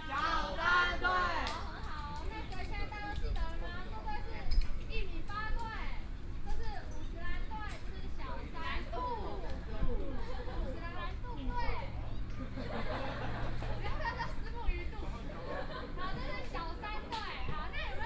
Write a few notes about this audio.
in the Park, Birdsong sound, Tourist